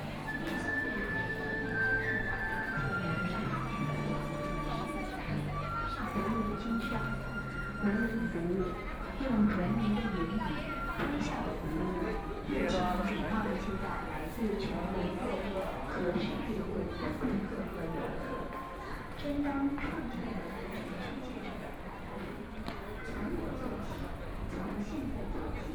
Yuyuan Garden, Shanghai - Tourist area
Shopping street sounds, The crowd, Bicycle brake sound, Walking through the old neighborhoods, Traffic Sound, Binaural recording, Zoom H6+ Soundman OKM II